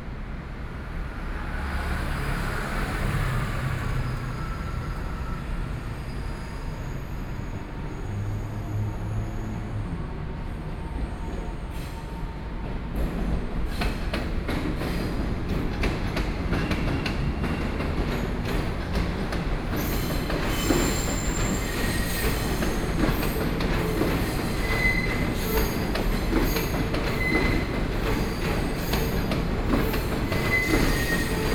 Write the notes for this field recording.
Train traveling through, Traffic Noise, Sony, PCM D50 + Soundman OKM II